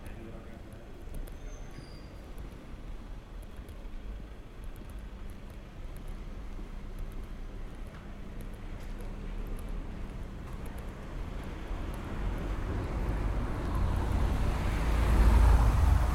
Realejo-San Matias, Granada, Granada, Spain - Soundwalk from Alhambra
I was walking down the street from Alhambra, microphones attached to the backpack. Unfortunately the zipper of bag was clicking while walking. In the beginning you can hear the water streaming down the small channels both side of street.